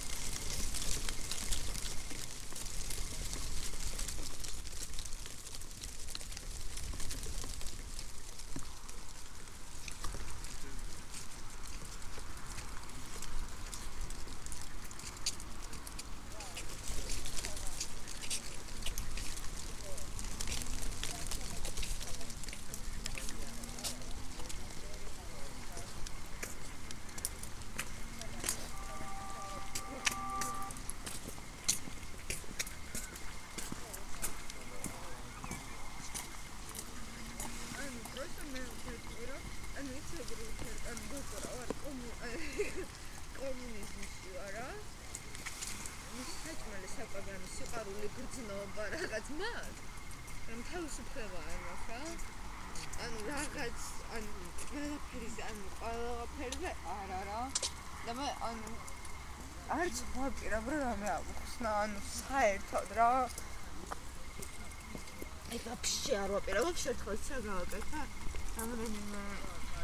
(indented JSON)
{
  "title": "Unnamed Road, Tbilisi, Georgia - Evening at Lisi Lake",
  "date": "2018-10-13 18:50:00",
  "description": "Late evening at Lisi Lake far from noises of Tbilisi. Path around the lake is the favorite place of local people for walking, jogging and cycling. Passerby tells about unhappy love and freedom, warm wind rustles dry leaves, bicycle passes, crickets chirping.",
  "latitude": "41.75",
  "longitude": "44.73",
  "altitude": "632",
  "timezone": "Asia/Tbilisi"
}